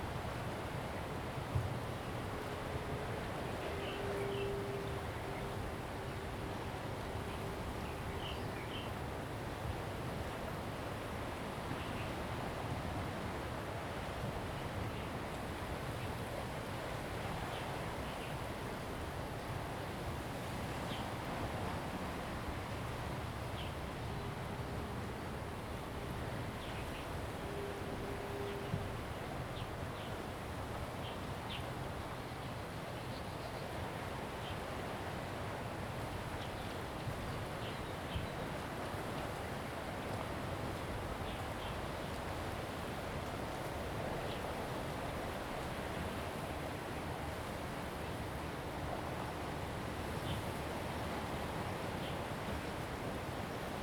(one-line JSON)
{"title": "石頭埔, 淡水區, New Taipei City - Bird and wave sounds", "date": "2016-04-16 07:54:00", "description": "Sound of the waves, Bird sounds, ruins\nZoom H2n MS+XY", "latitude": "25.23", "longitude": "121.45", "altitude": "7", "timezone": "Asia/Taipei"}